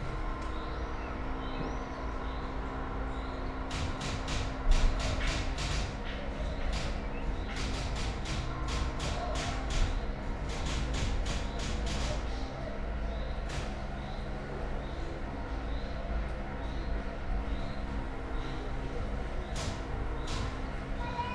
rewriting 18 textual fragments, written at Karl Liebknecht Straße 11, Berlin, part of ”Sitting by the window, on a white chair. Karl Liebknecht Straße 11, Berlin”
window, typewriter, evening yard ambiance